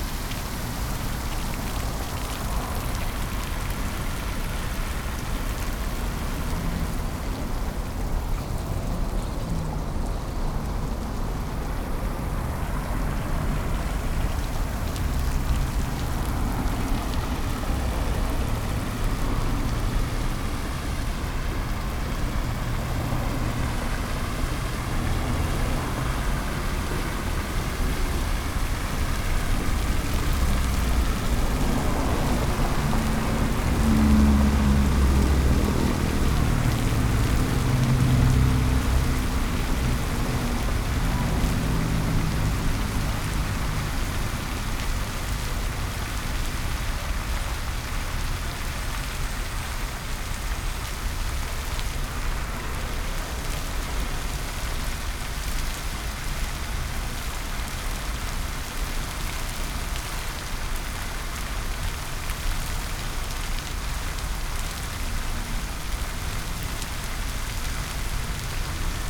Hidalgo Park, Julián de Obregón, Blvd. Adolfo López Mateos, Industrial, León, Gto., Mexico - Hidalgo Park Fountain
It's a fountain that has a base of about ten meters long by four. There were some people passing by and some cars and buses by the big boulevard where it's located.
I recorded this on Saturday on February 15th, 2020 at 13:57.
I was in front of the fountain for a while, I turned around on my bicycle and stay in front of the fountain a little more time.
I used a Tascam DR-05X with its own microphones and a Tascam WS-11 windshield.
Original Recording:
Type: Stereo
Es una fuente que tiene una base de unos diez metros de largo por cuatro. Había algunas personas que pasaban y algunos automóviles y autobuses por el gran bulevar donde se encuentra.
Grabé esto el sábado 15 de febrero de 2020 a las 13:57.
Estuve frente a la fuente por un tiempo, di la vuelta en mi bicicleta y me quedé un poco más delante de la fuente.
Usé un Tascam DR-05X con sus propios micrófonos y un parabrisas Tascam WS-11.
15 February 2020, 13:57